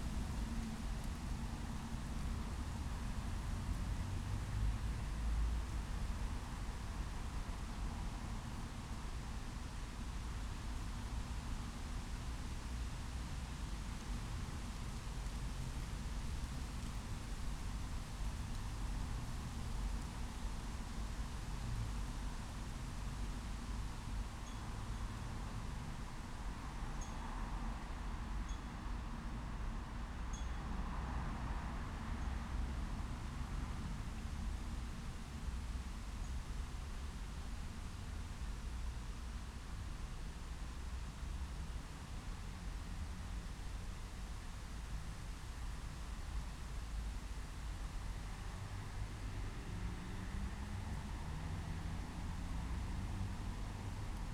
Casa del Reloj, 14h
The clock of Casa del Reloj is a typical soundmark of Legazpi/Arganzuela district.
Madrid, Spain, 21 November 2010